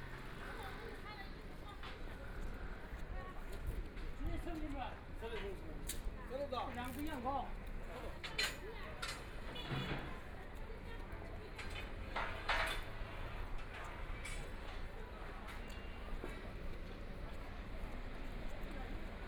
Xiamen Road, Shanghai - Walking through the old neighborhoods
Walking through the old neighborhoods, Various materials mall, Traffic Sound, Shopping street sounds, The crowd, Bicycle brake sound, Trumpet, Brakes sound, Footsteps, Bicycle Sound, Motor vehicle sound, Binaural recording, Zoom H6+ Soundman OKM II